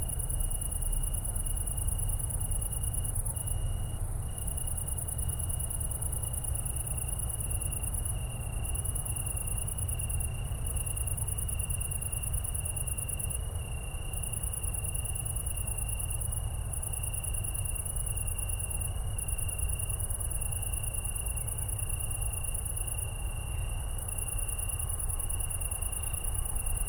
Rheinufer / An der Schanz, Köln, Deutschland - Italian tree crickets, traffic
Köln, at the river Rhein, italian tree crickets, other crickets, cat traffic, drone of a ship passing-by
World Listening Day 2019
(Sony PCM D50, DPA4060)